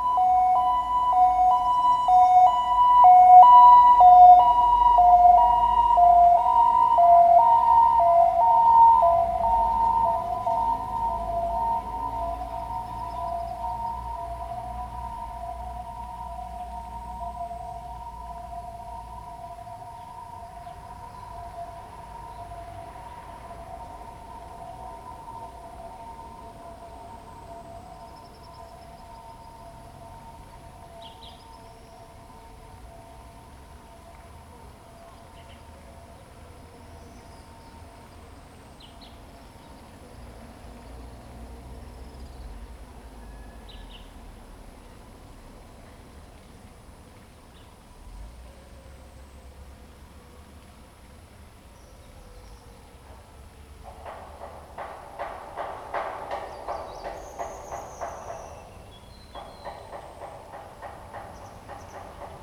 糯米橋, Guanxi Township, Hsinchu County - On the old bridge
On the old bridge, traffic sound, Bird call, The sound of the construction percussion, ambulance
Zoom H2n MS+XY
Guanxi Township, Hsinchu County, Taiwan, 14 August 2017